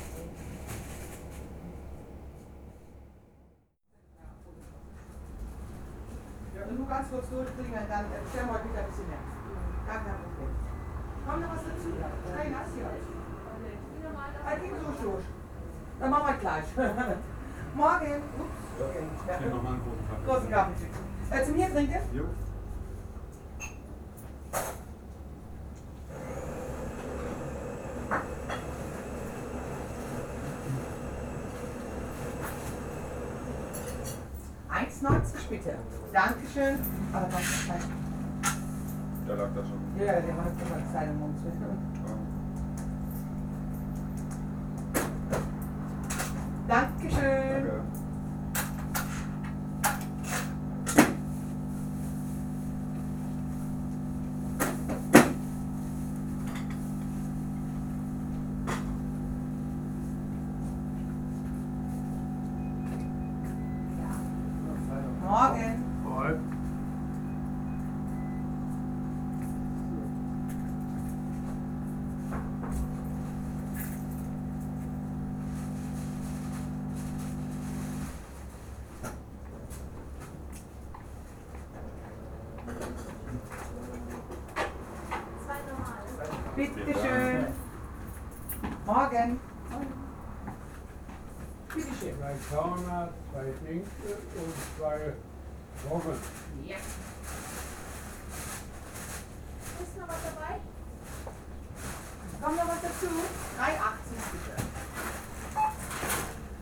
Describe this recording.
Bäckerei mit Minisupermarkt. Ein perfekt authentischer Ort, um in einheimisches Treiben eintauchen zu können. Die neuesten Nachrichten stehen nicht in der Zeitung, sondern diese erfährt man hier!